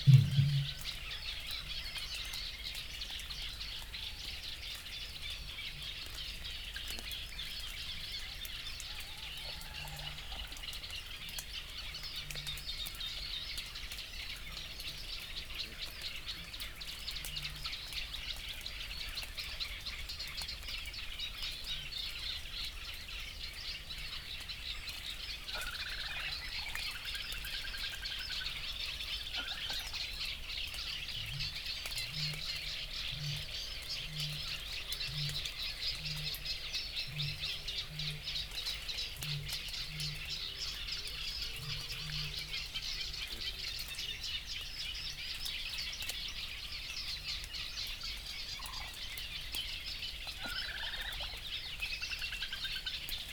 Bitterns and rain drops ... bitterns booming ... the rain has stopped though droplets still fall from the trees ... bird calls and song from ... bitterns ... reed warblers ... reed bunting ... little grebe ... crow ... coot ... water rail ... gadwall ... cuckoo ... wood pigeon ... Canada geese ... to name a few ... open lavalier mics clipped to a T bar fastened to a fishing bank stick ... one blip in the mix ... and background noise ...